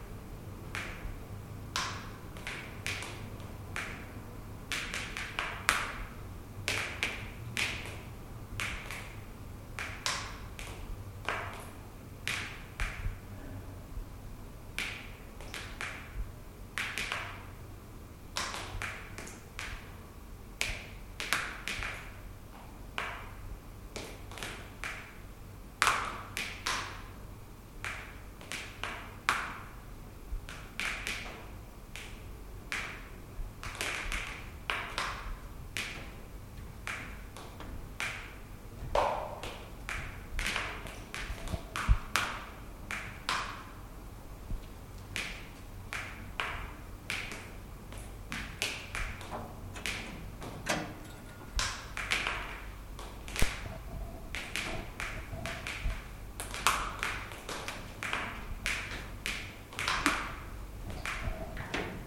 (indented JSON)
{
  "title": "Edison power station",
  "description": "nterieur of the Edison Transformer Station in Jeruzalemská street, before the reconstruction. The building was designed by E.A. Libra in 1926 and was in function till 90 ies.",
  "latitude": "50.08",
  "longitude": "14.43",
  "altitude": "211",
  "timezone": "Europe/Berlin"
}